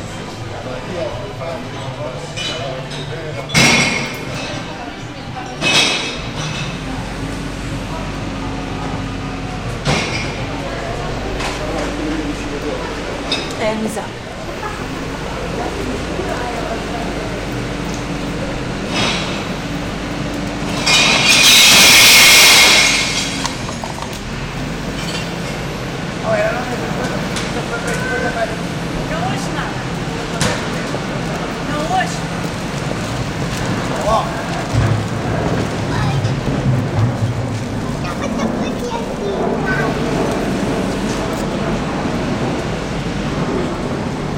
Rua da Barroca, Lissabon, Portugali - Garbage truck in Bairro Alto
Garbage truck shattering glass in Bairro Alto.